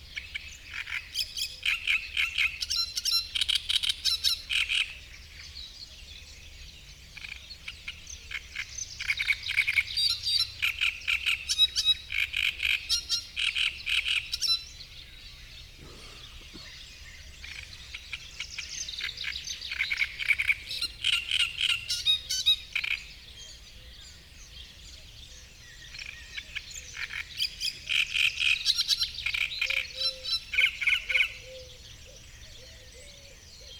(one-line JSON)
{"title": "Gmina Tykocin, Poland - great reed warbler and marsh frogs soundscape ...", "date": "2014-05-14 04:25:00", "description": "Kiermusy ... great reed warbler singing ... frog chorus ... sort of ... open lavalier mics either side of a furry table tennis bat used as a baffle ... warm misty morning ... raging thunderstorm the previous evening ...", "latitude": "53.21", "longitude": "22.71", "altitude": "103", "timezone": "Europe/Warsaw"}